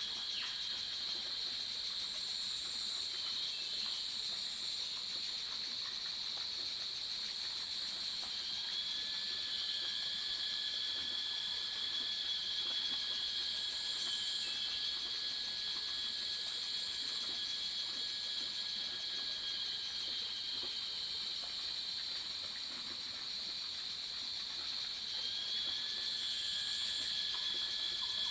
華龍巷, 魚池鄉五城村, Nantou County - Morning woods
Cicadas cry, Bird sounds, Small streams
Zoom H2n MS+XY